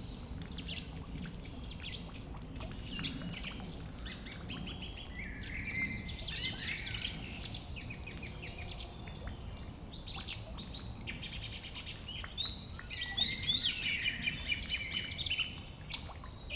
Ton (former clay mining area), little fishpond, dripping drain, evening birds, planes crossing, almost night
2 June 2010, 22:00